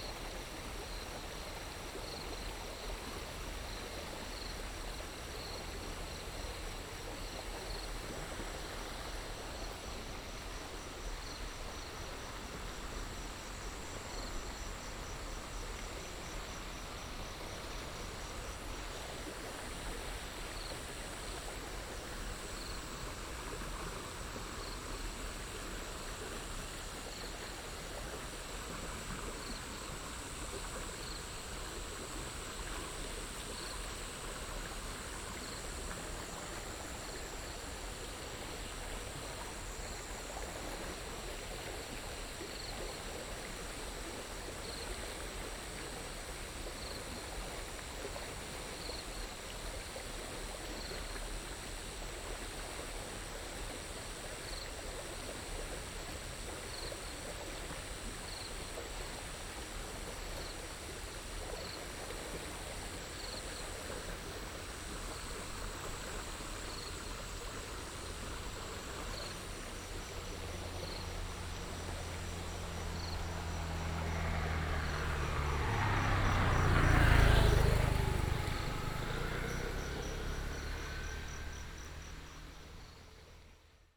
Bridge, Sound of water, Insects sounds
Nantou County, Puli Township, 水上巷, July 2016